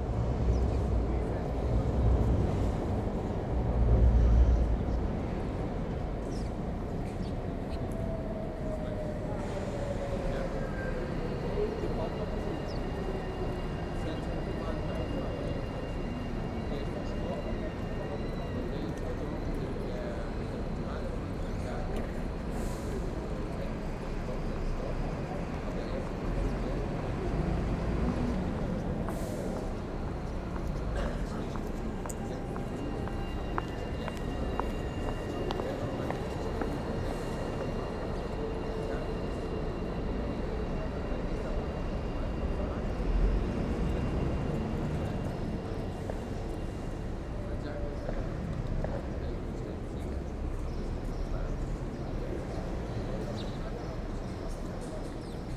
Schmidtstedter Str., Erfurt, Deutschland - Erfurt Main Station Forecourt 3
*Recording in AB Stereophony.
Morning activity building up: Scattered conversations, trolley wheels on paved floor, vivid footsteps, people, bus and tram engines and wheels, and subtle birds.
The space is wide and feels wide. It is the main arrival and transit point in Thuringia`s capital city of Erfurt. Outdoor cafes can be found here.
Recording and monitoring gear: Zoom F4 Field Recorder, RODE M5 MP, Beyerdynamic DT 770 PRO/ DT 1990 PRO.
Thüringen, Deutschland, 16 July 2020, 08:38